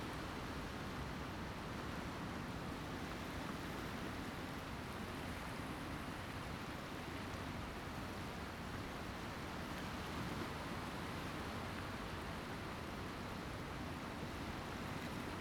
龍門沙灘, Huxi Township - At the beach
At the beach, sound of the Waves
Zoom H2n MS+XY
Penghu County, Taiwan, 21 October 2014